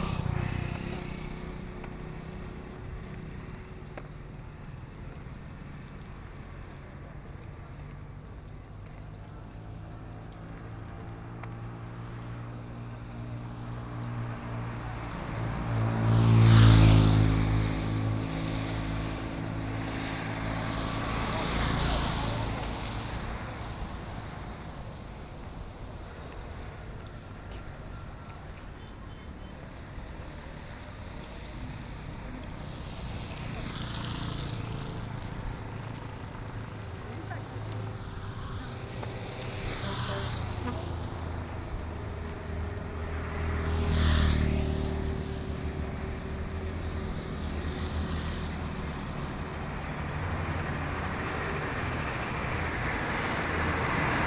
Chigorodó, Chigorodó, Antioquia, Colombia - Del hotel al colegio
A soundwalk from Eureka Hotel up to Laura Montoya school
The entire collection can be fin on this link